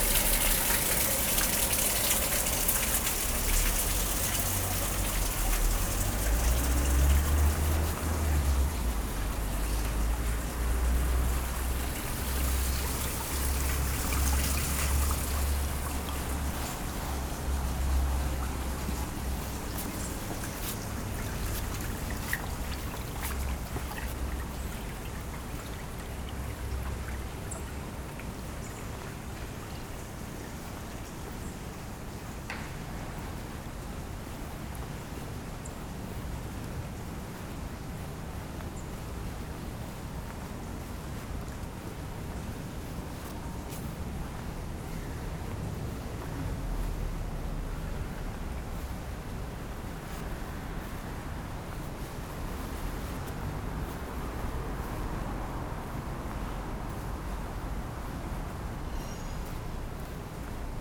La Rochefoucauld, Paris, France - Street cleaning
People are cleaning street, early on the morning. Water is flowing everywhere from drains.